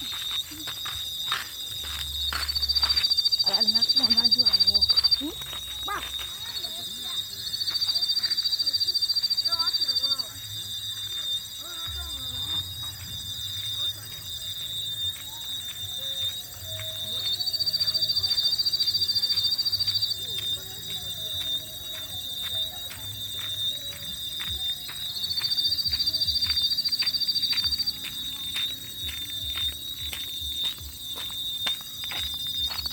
Koforidua, Ghana - Suburban Ghana, Pantoase, 2022. *Binaural
Night-time variations of bioacoustics in suburban Ghana.
Recording format: Binaural.
Date: 06.03.2022.
Time: Between 8 and 9pm.
The Soundscape and site to be analysed to identify specific species. The sound will go into the archives to keep memory of the place as the area keeps expanding rapidly with new building constructions and human activity.
Field recording gear: Soundman OKM II into ZOOM F4.